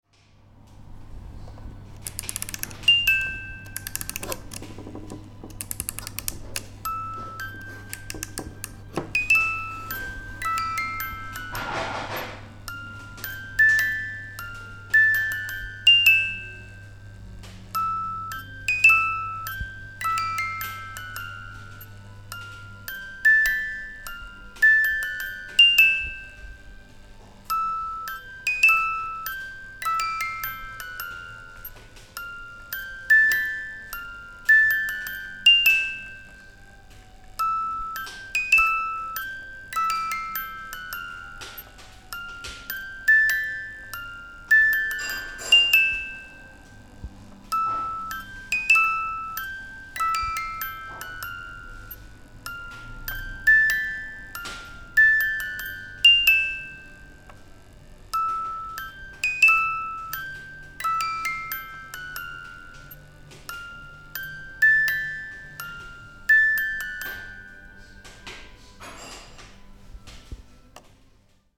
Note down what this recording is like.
17.11.2008 15:00 zigarettendose, anzünder, spieluhr (dr. schiwago), plastik, versteckt in einem buchimitat von goethes "leiden des jungen w."